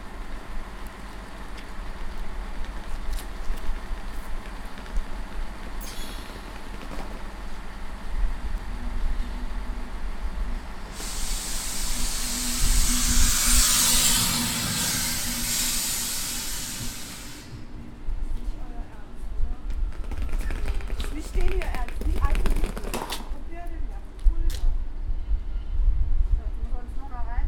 Limburg (Lahn) ZOB Süd, Limburg an der Lahn, Deutschland - Frühzug nach Frankfurt
Einfahrender Zug; Fahrgäste